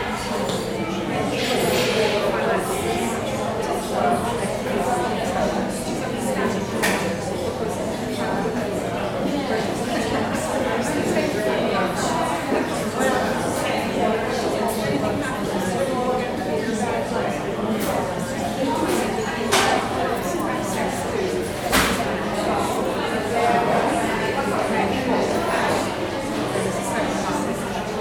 Newport, Isle of Wight, UK - Art centre cafe noise
ambient cafe noise, many indistinct conversations, occasional sound of coffee machine and crockery, heavy rain outside. H2n recorder.